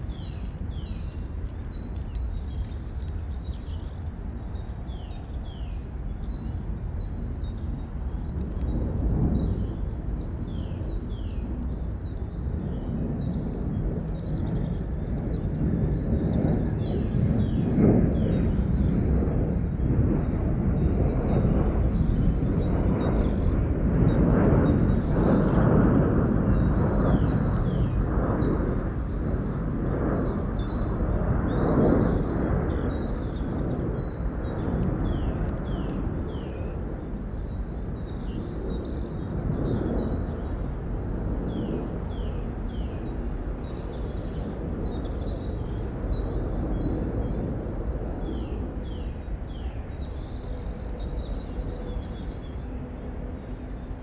Braník woodland, a stormy night, rain and wind, Nad Údolím, Praha, Czechia - Dawn, first light, first birds
Also recorded from the continuous stream. The rain has stopped but the gusty wind continues. Traffic is still the background drone. Planes fly above. The birds sound distant, but a nuthatch and great tits are calling. Later robins, a blackbird, chaffinch and chiffchaff sing. Tram wheels squealing from the valley below create a high-pitched tone. A freight train rumbles past on the track very close to the microphones.
Praha, Česko, April 2022